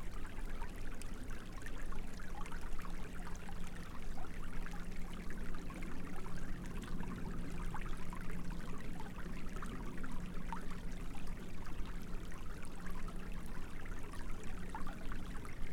Keifer Creek, Ballwin, Missouri, USA - Keifer Creek
Environmental recording at this bend in Keifer Creek. Creek named for the Keefer family. Recording includes distant construction equipment sounds from Kiefer Creek Road.
Missouri, United States, January 2022